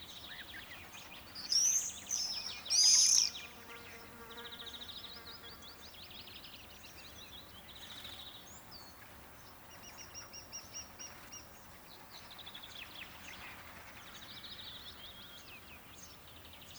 larnichtsberg, swallows, crows and insects
On a mellow windy summer morning near a forest. Swallows crossing a wheat field, some crows on a tree and insect in the meadow.
Larnichtsberg, Schwalben, Krähen und Insekten
An einem milden windigen Sommermorgen in der Nähe eines Waldes. Schwalben überqueren ein Weizenfeld, einige Krähen auf einem Baum und Insekten in der Wiese.
Larnichtsberg, hirondelles, corbeaux et insectes
Un doux et venteux matin d’été aux abords d’une forêt. Des hirondelles passent au dessus d’un champ de blé, des corbeaux sont assis sur un arbre et des insectes volent dans la prairie.
Luxembourg